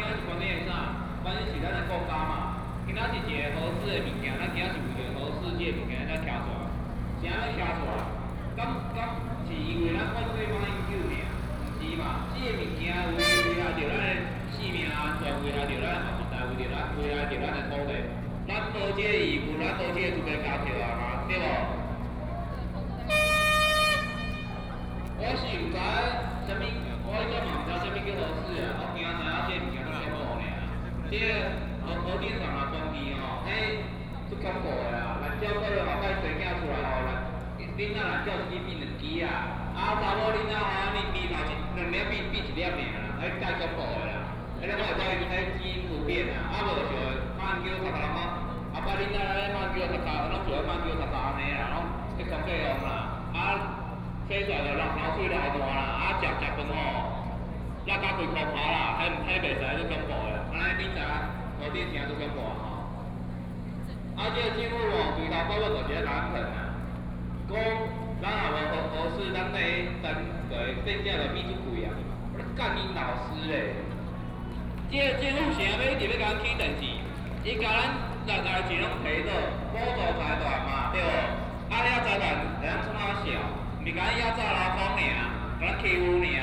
Protest actions are expected to be paralyzed major traffic roads, Opposition to nuclear power, Protest